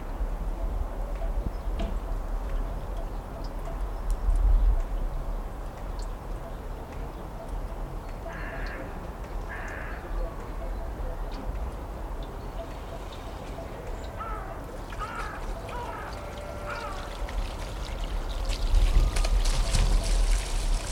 Saléchan, France - Saléchan début 2015
Mountain atmosphere, goats' bells, crows, dog barking and remote cars passing by.
1 January 2015